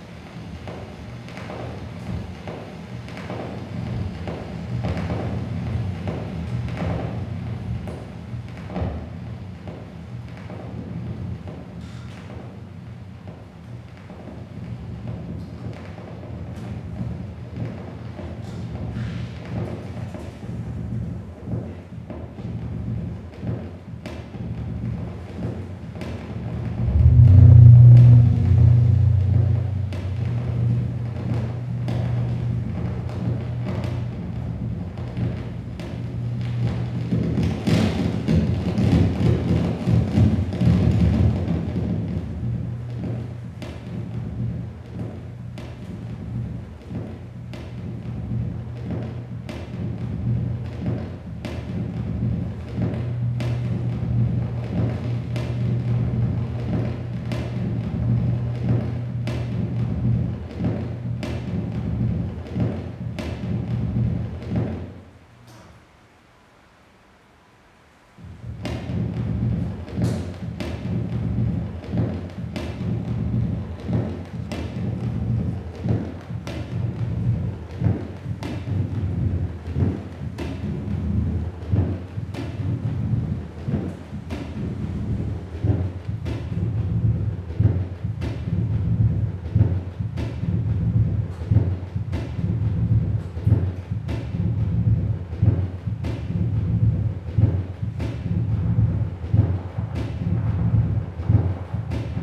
gimnazija, Maribor, Slovenia - turntable interpretation
participants in ignaz schick, martin tétrault, and joke lanz's turntable workshop interpret the sounds of the rainstorm happening outside in realtime. the sounds of the rain and thunder can be heard through the open windows.